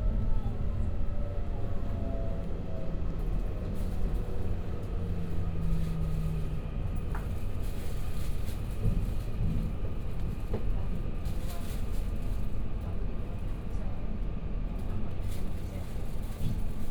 In the compartment, An uncomfortable process, It is very regrettable, Dialogue in the compartment
Taoyuan County, Taiwan, 18 January 2017